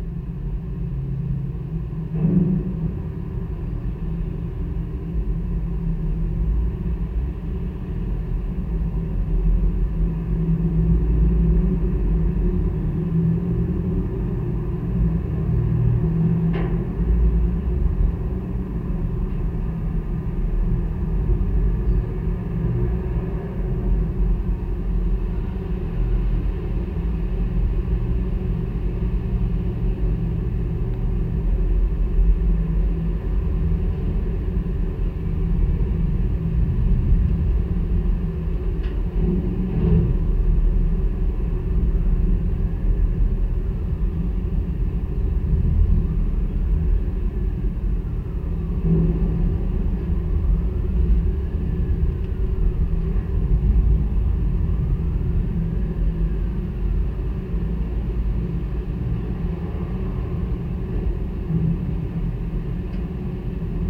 Kelmė, Lithuania, rain pipe
contact microphones on rain pipe of evangelic church
12 June 2019